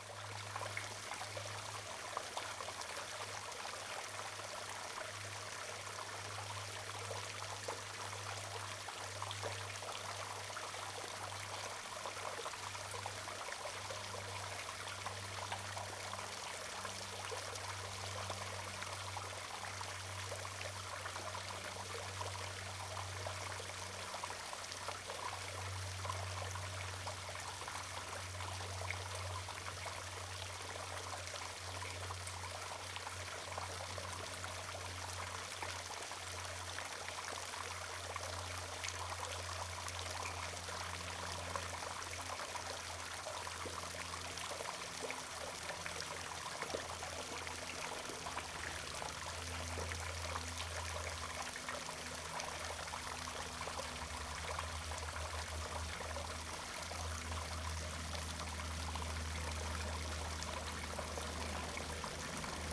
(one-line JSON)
{"title": "池南路5段, Shoufeng Township - In the next breeding pond", "date": "2014-08-28 11:24:00", "description": "In the next breeding pond, Traffic Sound, Hot weather\nZoom H2n MS+XY", "latitude": "23.90", "longitude": "121.51", "altitude": "108", "timezone": "Asia/Taipei"}